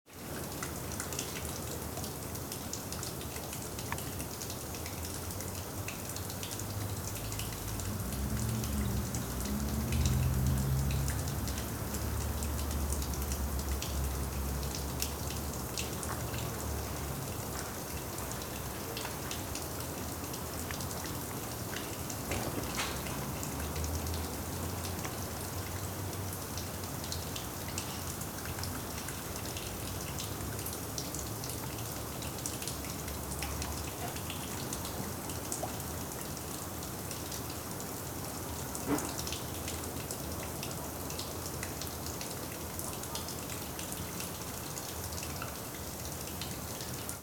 Serre, collège de Saint-Estève, Pyrénées-Orientales, France - Ambiance sous la serre d'horticulture
Preneur de son : Thierry
17 March 2011